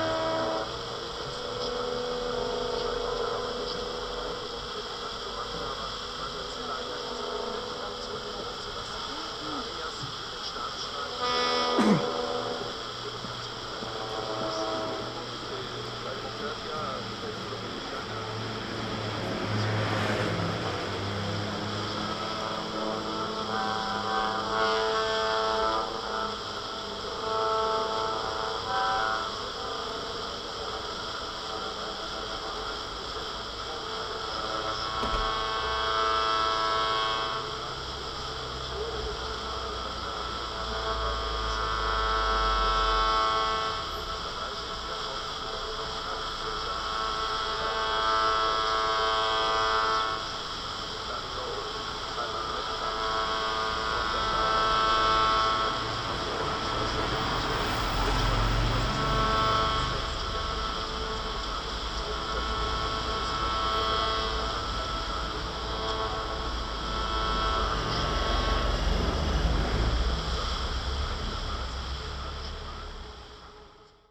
transistor radio on the pavement during the transmission of the aporee event >standing waves< by HOKURO on fm 100
HOKURO are Sachiyo Honda, Sabri Meddeb, Michael Northam (accordion, objects, strings, winds, voices and electronics)
... we invite you to participate by playing with us on any kind of instrument or voice that can sustain an A or E or equalivant frequency - the idea is to try to maintain and weave inside a river of sound for as long as possible ... (from the invitation to the concert at radio aporee berlin, Nov. 28 2009)